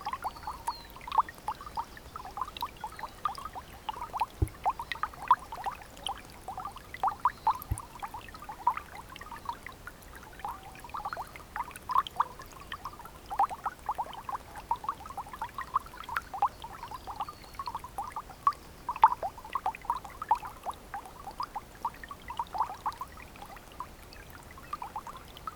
River Skirfare, Litton, Skipton, UK - Roaring river
Hard to believe that yesterday you didn’t want to fall in while walking over the stepping stones. And today you could walk across the river (if you could call it that) without using the stepping stones and you really had to try to get your boots wet.
England, United Kingdom